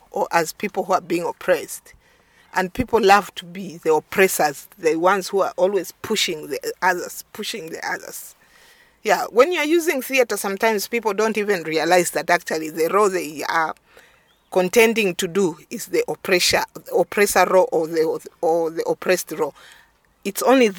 Old Independence Stadium, Lusaka, Zambia - Mary Manzole talks education through theatre…
To record this interview with Mary, we were hiding in Mary’s car from the sun and the general busyness outside. Rehearsals with 260 young musicians and performers inside Old Independence Stadium for the Zone 6 Youth Sports Games are still ongoing, while Mary describes to me, and our future listeners, how theatre can respond to the needs of a community and how dramatic re-enactment can inspire change, for example in schools…
Mary Manzole is an actress, theatre director, educator, founder member of Zambia Popular Theatre Alliance (ZAPOTA), and artistic director at Kamoto Community Arts.
The full interview with Mary is archived here: